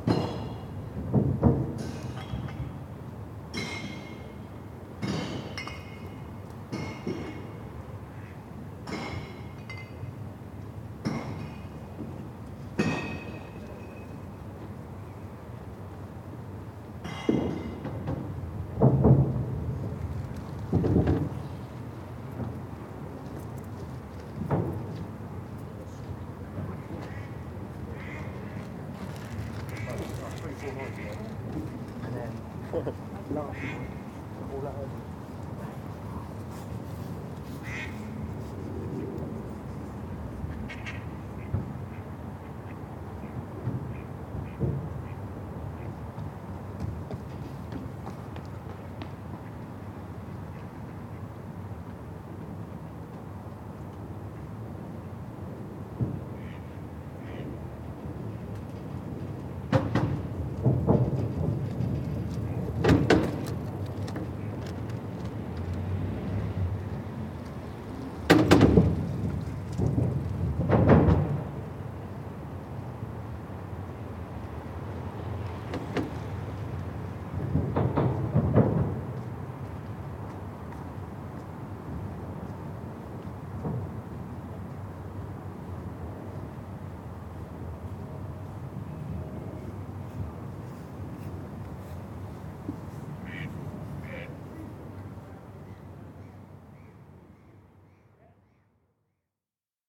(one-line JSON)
{"title": "The pedestrian bridge beside the River Kennet, Reading, UK - bikes, walkers and bottle-bank", "date": "2017-04-04 18:07:00", "description": "A few moments after the earlier sounds recorded here, you can hear someone dropping their bottles off at the bottle bank.", "latitude": "51.45", "longitude": "-0.96", "altitude": "39", "timezone": "Europe/London"}